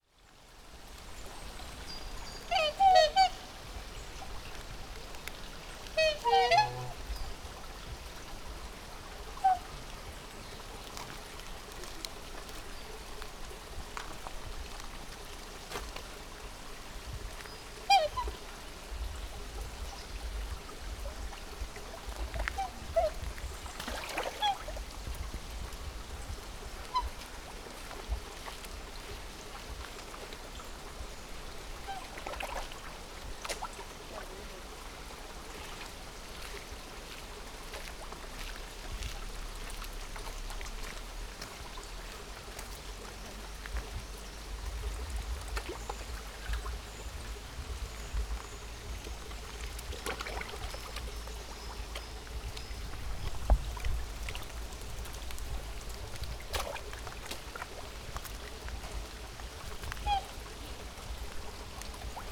Sintra, gardens around Palácio da Pena - black swans
a couple of friendly black swans playing about and wailing
Sintra, Portugal, 28 September 2013, 13:34